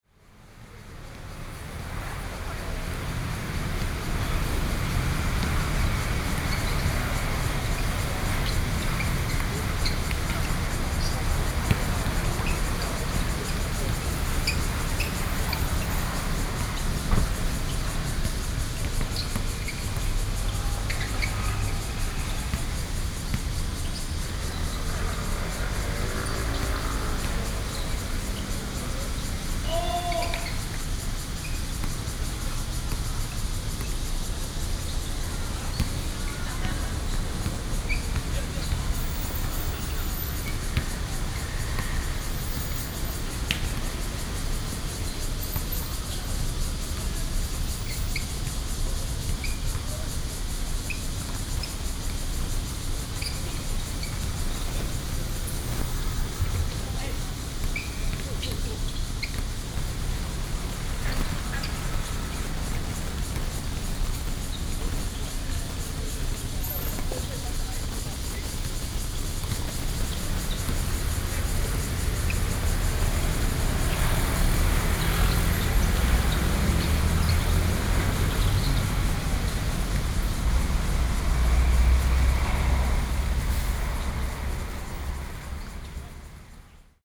in the street, Sony PCM D50 + Soundman OKM II
Taipei City, Taiwan, 6 August 2013